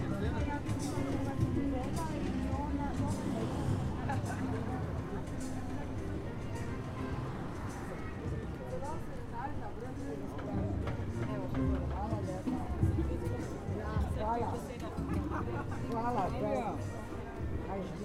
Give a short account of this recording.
Small glimpse of a jazz concert in the center of the city, fountain shower, people of course. Recorded with H5n + AKG C568 B